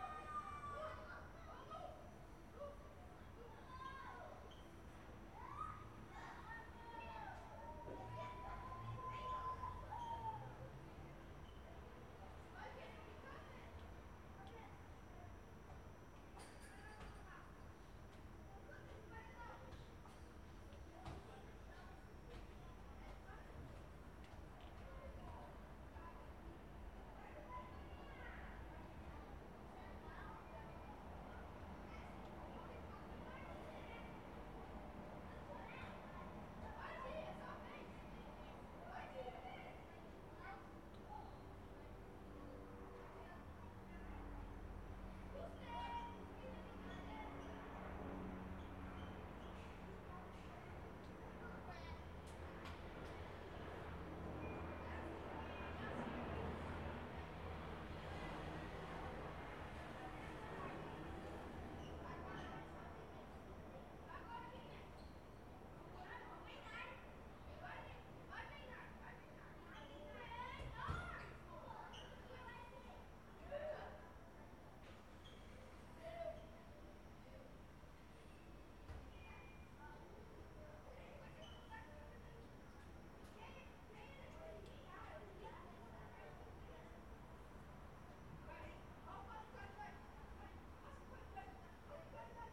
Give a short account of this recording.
Captação de áudio interna para cena. Trabalho APS - Disciplina Captação e edição de áudio 2019/1